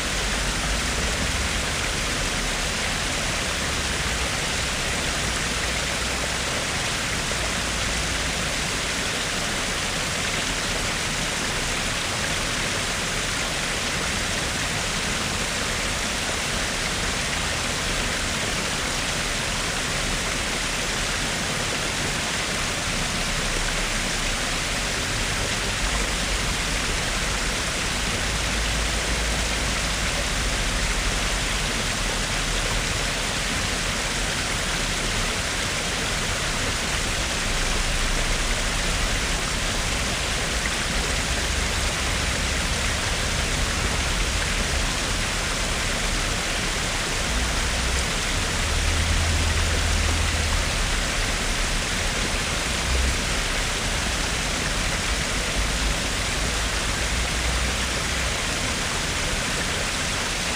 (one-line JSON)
{"title": "Marin County, CA, USA - Fern Creek - Muir Woods", "date": "2016-01-12 14:40:00", "description": "Recorded with a pair of DPA 4060s and a Marantz PMD661", "latitude": "37.91", "longitude": "-122.58", "altitude": "150", "timezone": "America/Los_Angeles"}